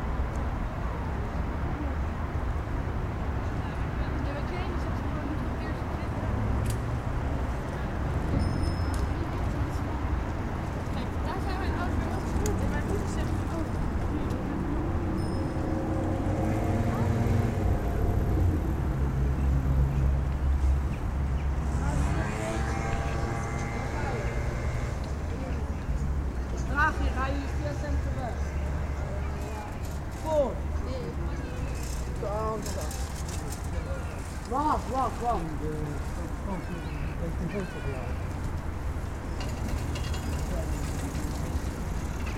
Bridge, Zoetermeer
Bridge on soundwalk